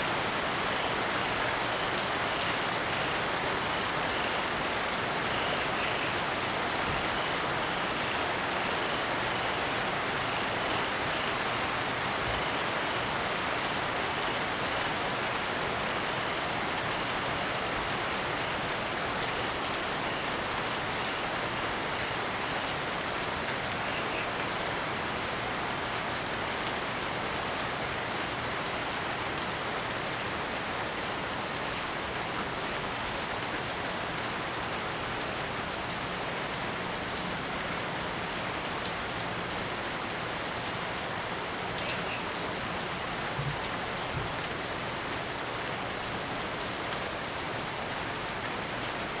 樹蛙亭庭園餐廳, 埔里鎮桃米里, Taiwan - Heavy rain
Frog chirping, Heavy rain
Zoom H2n MS+ XY